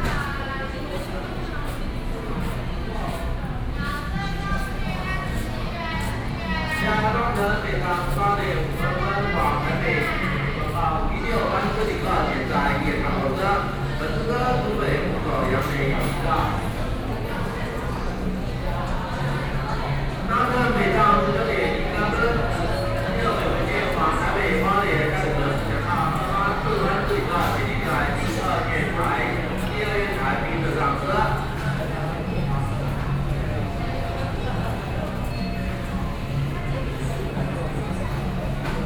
Hsinchu Station - Station hall
in the Station hall, Station broadcast messages, Sony PCM D50 + Soundman OKM II
Hsinchu City, Taiwan